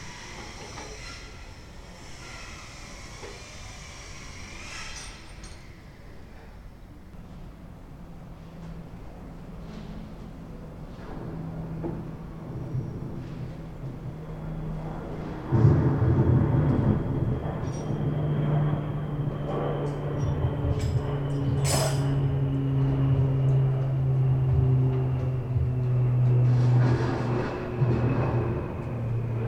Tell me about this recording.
Tue 05.08.2008, 12:20, a plumber tries to repair the water-tap in my bathroom, producing a mess first, then blaming others about it. meanwhile, a plane crosses, and a heavy thunderstorm comes out of the film studio's open window below. the sky is blue and it's hot...